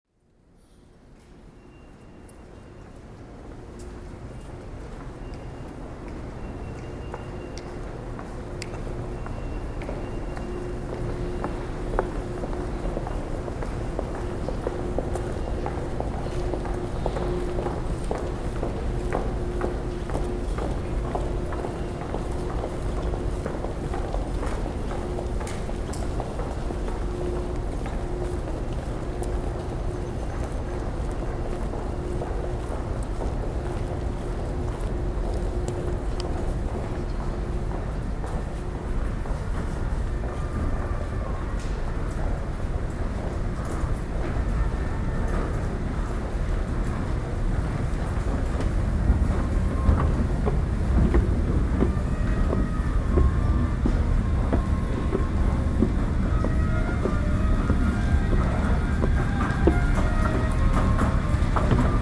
Montreal: Bonaventure Metro to Centre Bell - Bonaventure Metro to Centre Bell
equipment used: Ipod Nano with Belkin TuneTalk
Up the escalators towards Centre Bell
QC, Canada, 9 February 2009